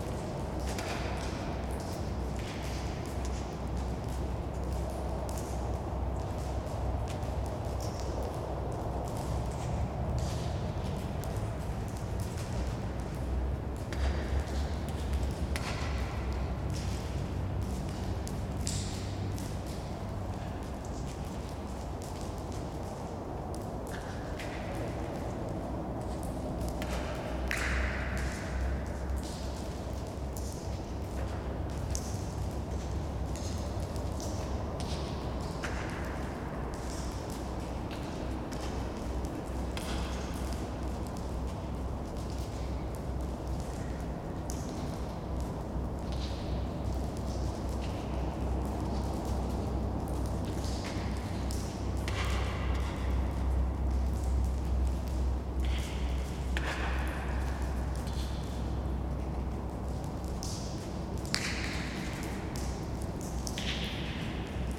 Drips inside bunker of the Tukums former soviet air base